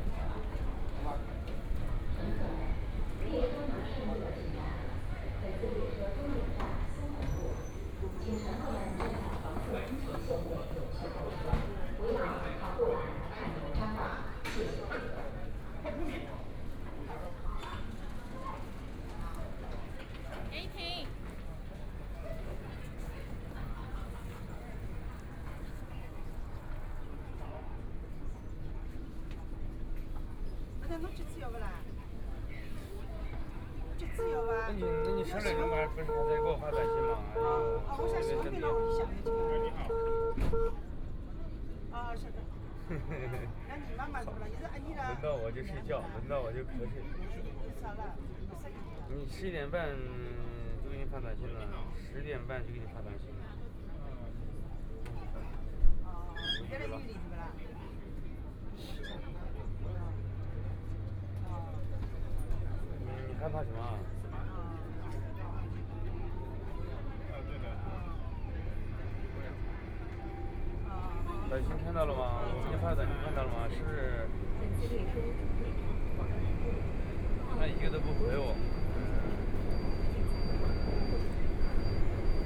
Huangpu District, Shanghai - Line2 (Shanghai Metro)
Line2 (Shanghai Metro), from East Nanjing Road station to Dongchang Road station, Binaural recording, Zoom H6+ Soundman OKM II